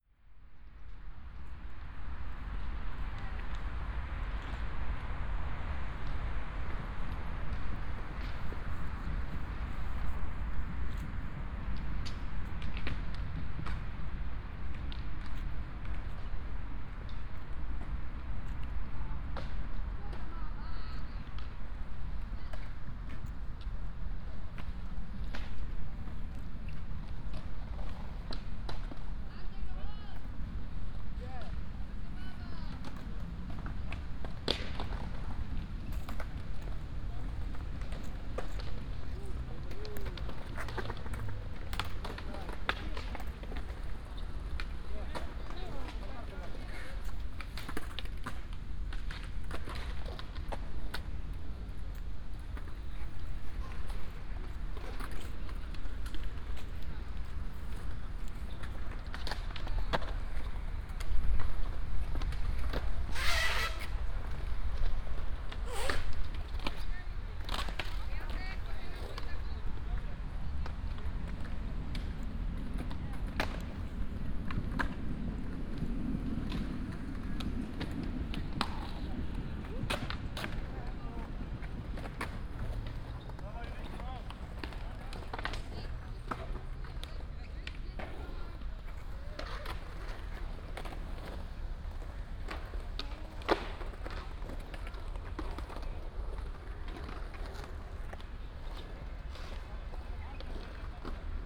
Poznan, downtown, Marcinkowski's Park - skatepark
(binaural) skate park, a bunch of teenagers riding their skateboards, doing tricks on the ramps and rails, hanging out. (luhd PM-01/sony d50)
Poznań, Poland, September 2015